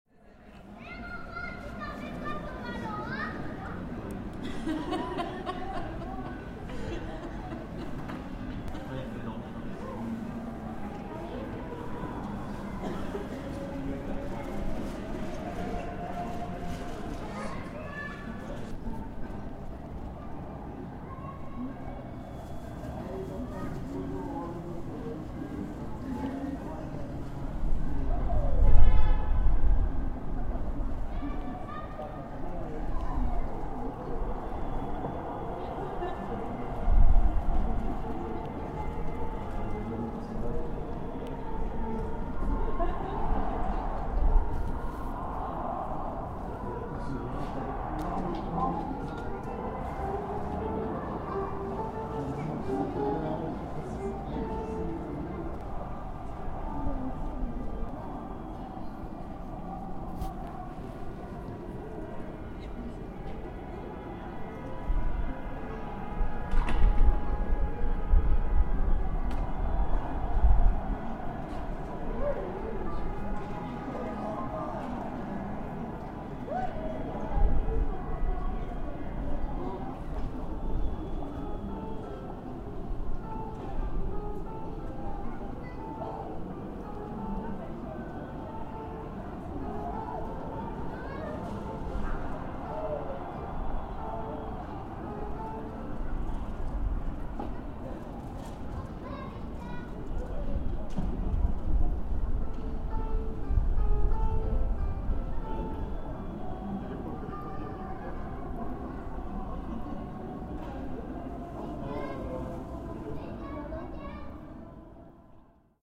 Gare Saint Sauveur
between the visual and the visceral